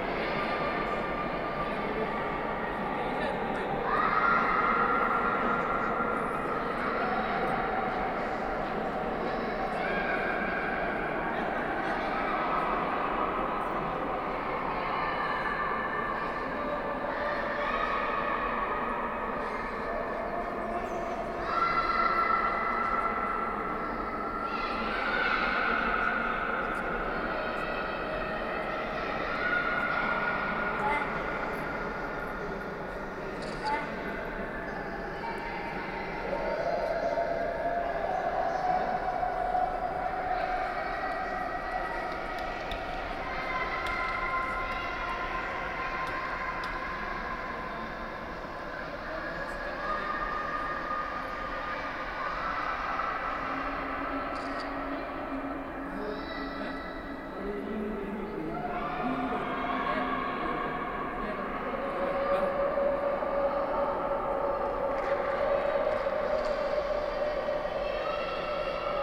India, Karnataka, Bijapur, Gol Gumbaz, Platform, Muhammad Adil Shah, echoe, Largest Dome in India, The acoustics of the enclosed place make it a whispering gallery where even the smallest sound is heard across the other side of the Gumbaz. At the periphery of the dome is a circular balcony where visitors can witness the astounding whispering gallery. Any whisper, clap or sound gets echoed around 10 times. Anything whispered from one corner of the gallery can be heard clearly on the diagonally opposite side. It is also said that the Sultan, Ibrahim Adil Shah and his Queen used to converse in the same manner. During his time, the musicians used to sing, seated in the whispering gallery so that the sound produced could be reached to very corner of the hall. However, recently visitors to the gallery, in the name of testing the effect, have converted it into a madhouse.
Karnataka, India, 9 March 2011, 09:55